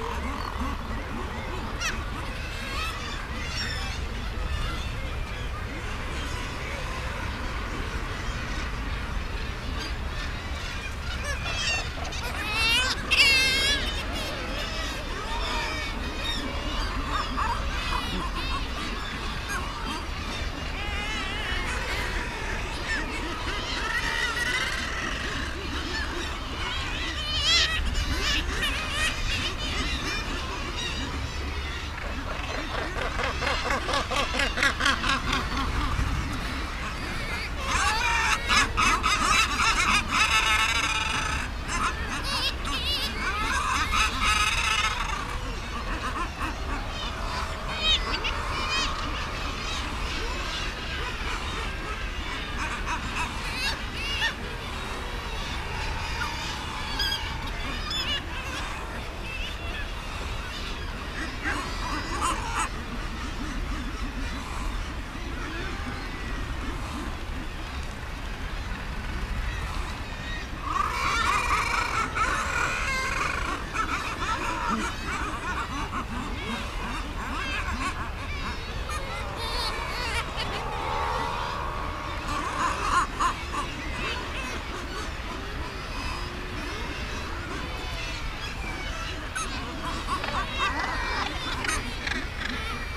East Riding of Yorkshire, UK - Guillemots ... mostly ...
Guillemots ... mostly ... guillemots calling on the ledges of RSPB Bempton Cliffs ... bird calls from gannet ... kittiwake ... razorbill ... lavalier mics on a T bar fastened to a fishing landing net pole ... some windblast and background noise ...
Bridlington, UK, 24 May 2017, 05:40